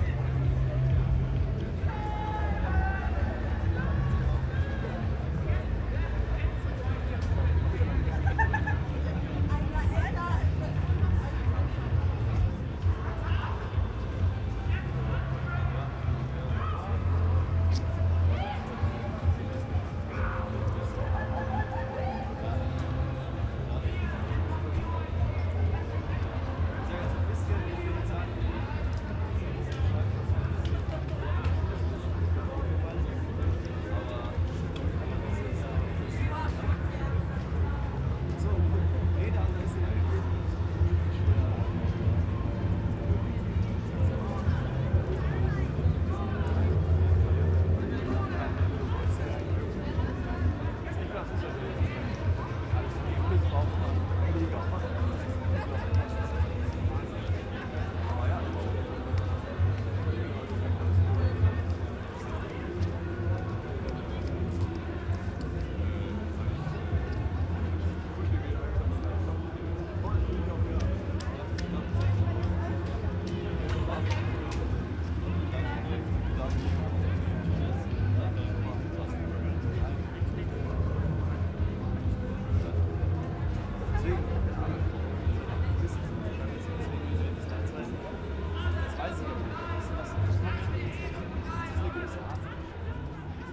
Friedrichstr. Berlin, sound of 1000s of people in the street, during a demonstration about climate change
(Sony PCM D50, Primo EM172)
Berlin, Germany, 1 December 2018, 15:15